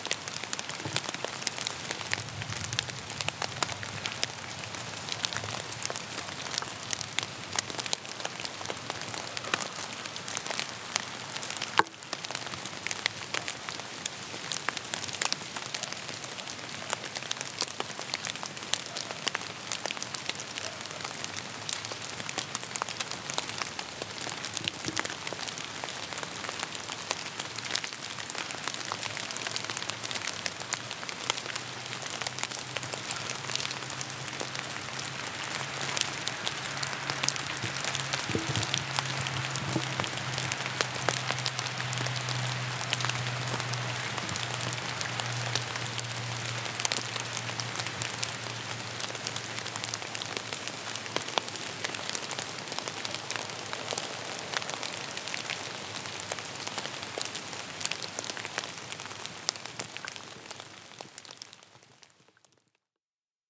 Heist-op-den-Berg, Belgium - hailsnow
recording of rain on leafes covered with ice
zoom H4 recorded by Pieter Thys